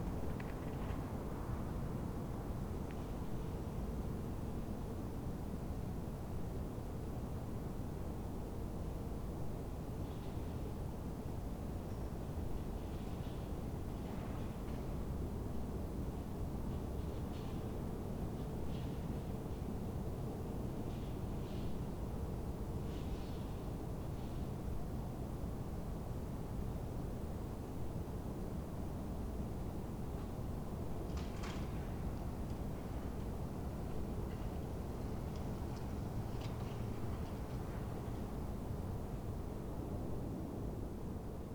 Berlin: Vermessungspunkt Maybachufer / Bürknerstraße - Klangvermessung Kreuzkölln ::: 03.11.2011 ::: 02:25